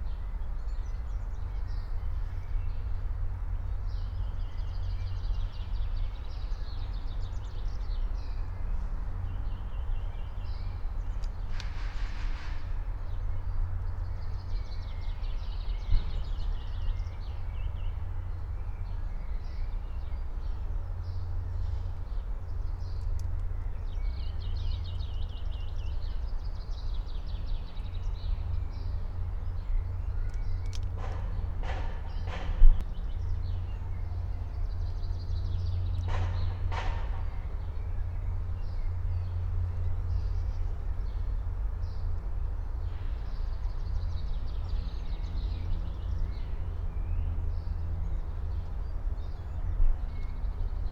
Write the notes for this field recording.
12:24 Friedhof Columbiadamm, Berlin, (remote microphone: AOM 5024HDR/ IQAudio/ RasPi Zero/ 4G modem)